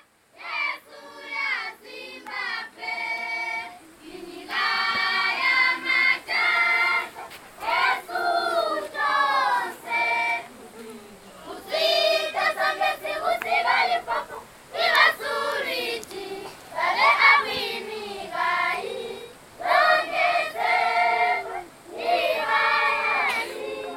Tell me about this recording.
…all get up for the anthems… first the Zimbabwe national anthem… then, what I gather, might be a special anthem of the BaTonga… …we are witnessing an award ceremony at Damba Primary School, a village in the bushland near Manjolo… the village and guest are gathered under the largest tree in the school ground…